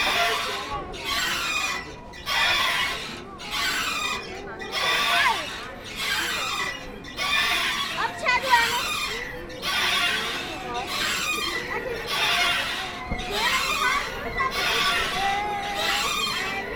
København, Denmark - Funny swings
Nørrebro is a funny disctrict. It's said that Denmark is the happiest country in the world. We can understand this as you can play (and drink and fraternize) at every street corner. Here is the sound of children playing in the swings.
16 April 2019